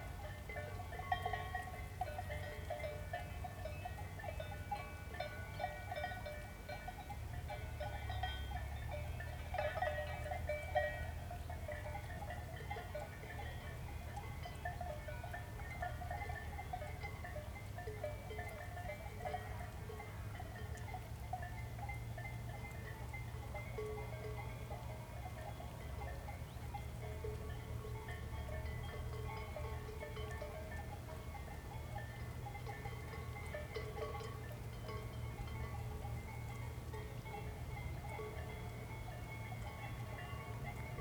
Grub, Schweiz - Hohe Höhe - On the ridge, distant cow bells
[Hi-MD-recorder Sony MZ-NH900, Beyerdynamic MCE 82]